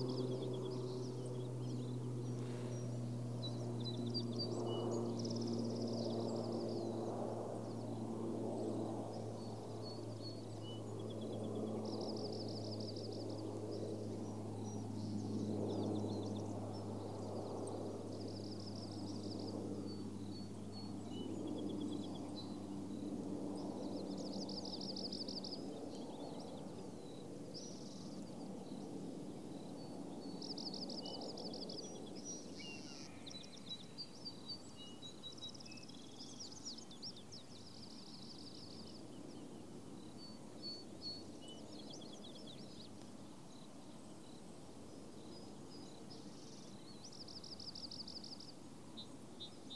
SMIP RANCH, D.R.A.P., San Mateo County, CA, USA - On the Torii Trail